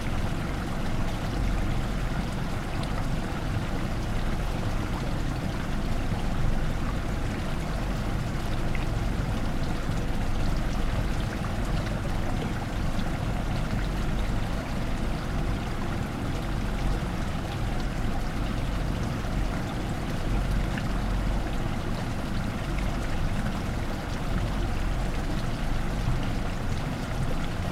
Povilai, Lithuania, the flow
Water running from one pond to other